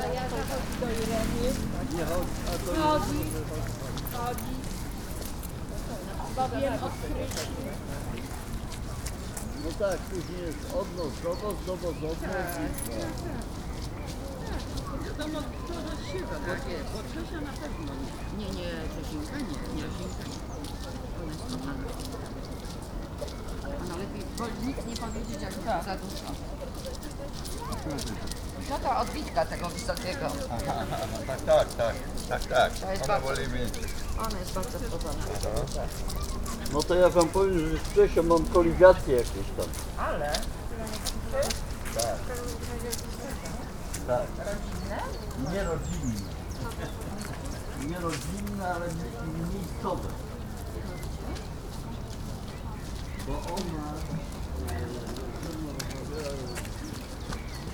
Poznan, at Rusalka lake - lake beach from the other bank
the beach was crowded this day. plenty of people talking, playing games, kids running about, dogs barking. the intense voice of the crowd was to be heard on the other bank of the lake as well. highly reverberated, muted yet a interesting sound texture. conversations of strollers and hyped birds in the park behind me.